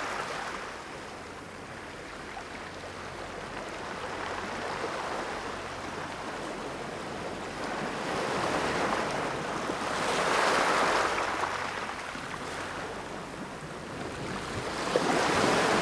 Rue du Port Goret, Tréveneuc, France - AMB EXT JOUR vagues plage galets
Ambiance de vagues s'échouant sur une plage de galets. Enregistré avec un couple ORTF de Sennheiser MKH40 coiffés de Rycote Baby Ball Windjammer et une Sound Devices MixPre3.